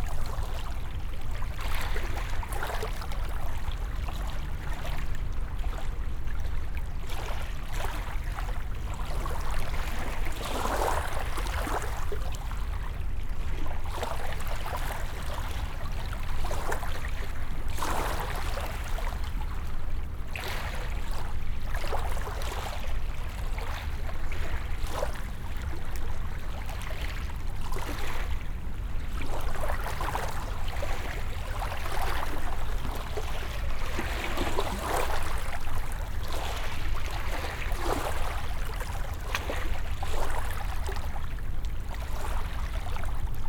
Unnamed Road, Croton-On-Hudson, NY, USA - Beachplay-Croton Point
You are listening to Hudson's playful waves in a cove on Croton Point on a sunny November morning. An intense machine world, despite the great distance, is always palpable.
Westchester County, New York, United States, November 2019